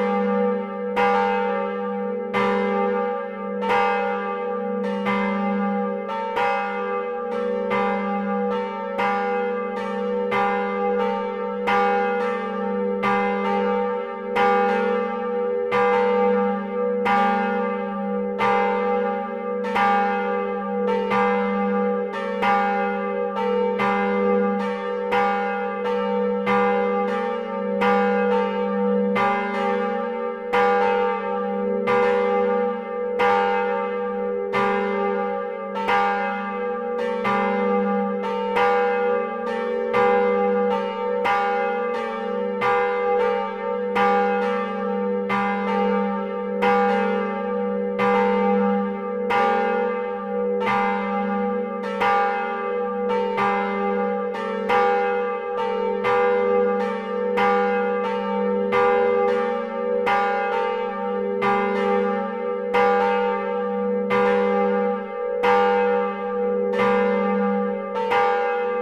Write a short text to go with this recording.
The two bells of the church, recorded inside the tower. These two bells are mediocre, the bellfounder made only these two ones.